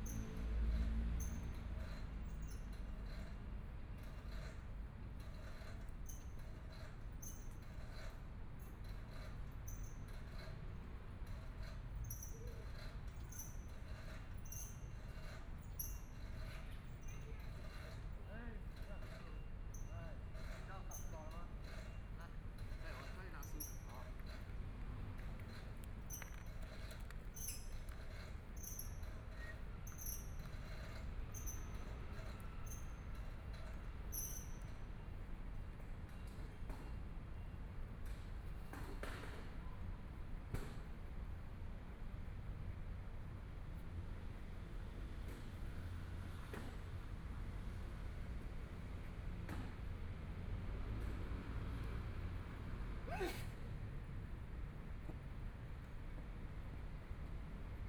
JiLin Park, Taipei City - in the Park
Night in the park, Traffic Sound
Please turn up the volume
Binaural recordings, Zoom H4n+ Soundman OKM II